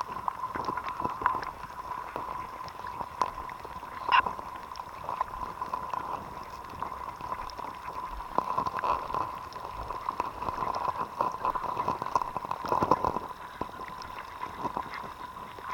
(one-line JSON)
{"title": "Šventupys, Lithuania, river Sventoji", "date": "2022-07-17 14:25:00", "description": "HYdrophone recording in river Sventoji.", "latitude": "55.62", "longitude": "25.44", "altitude": "84", "timezone": "Europe/Vilnius"}